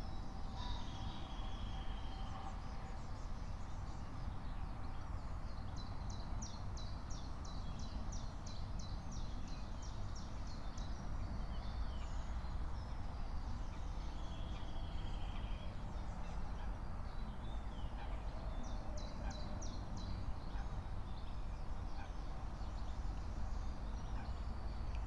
07:00 Berlin Buch, Lietzengraben - wetland ambience